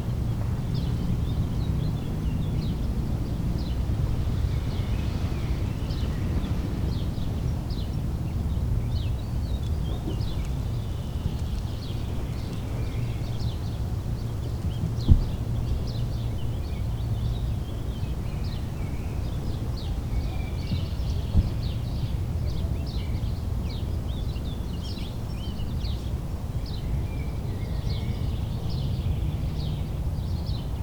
singing birds, plane crossing the sky and in the background the sound of the motorway a1
the city, the country & me: may 6, 2011
Solingen, Germany